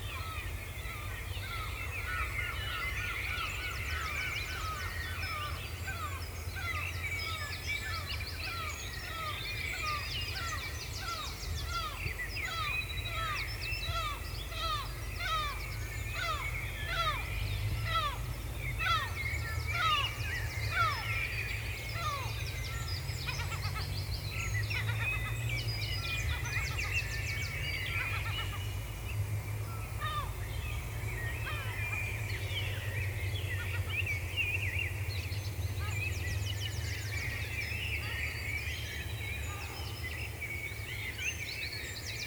The Staiths, Gateshead UK - DAWN CHORUS RECORDING AT THE STAITHS, GATESHEAD
A 20 min recording of the 2020 Dawn Chorus. Recorded at The Staiths, Gateshead between the hours of 4.30am and 5.30am.
A wonderful vivid soundtrack, featuring a wide variety of bird sounds and noises erupting first thing in the morning.
England, United Kingdom